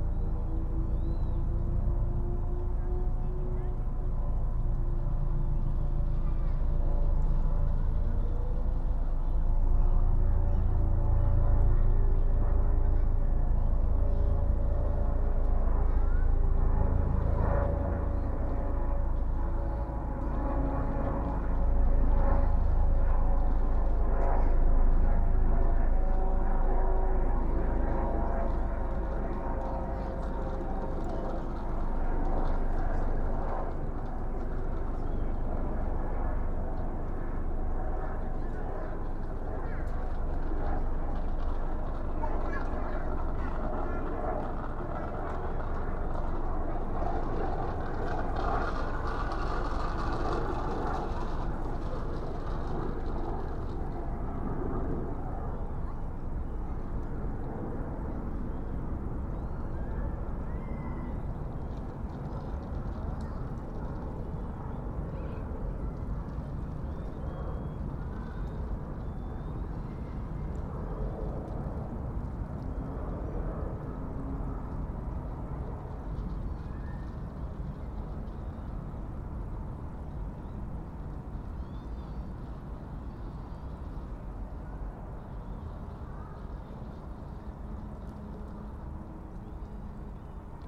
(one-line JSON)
{"title": "Teams, Gateshead, UK - Opposite Dunston Staithes", "date": "2016-08-14 18:00:00", "description": "Stood on riverside in front of Dunston Staithes. Children passing on bikes behind. Adults with push chairs. Birds over river. Train and cars in distance. Air Ambulance, helicopter flys overhead. Sound of water going into river. You can also hear our dog and my partner lighting a cigarette. Recorded on Sony PCM-M10.", "latitude": "54.96", "longitude": "-1.63", "altitude": "5", "timezone": "Europe/London"}